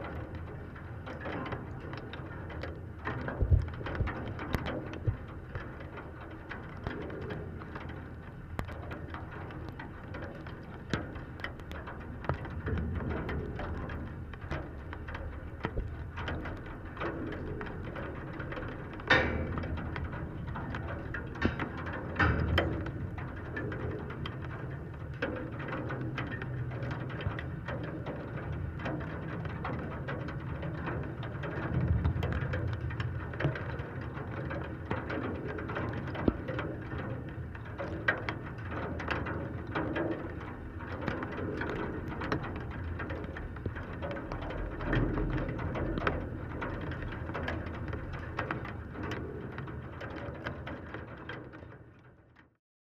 Anyksciai, Lithuania, new bridge
new, still closed for passangers, metallic bridge through river Sventoji. drizzle rain. contact microphones on the construction